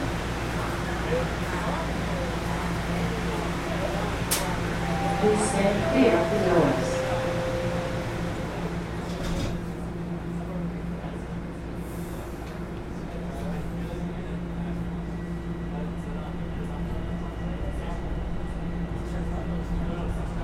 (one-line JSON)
{"title": "St Patrick Station, Toronto, ON, Canada - Toronto Subway, from St. Patrick to Spadina", "date": "2019-10-09 19:30:00", "description": "Recorded while taking a TTC subway train from St. Patrick station to Spadina station.", "latitude": "43.65", "longitude": "-79.39", "altitude": "112", "timezone": "America/Toronto"}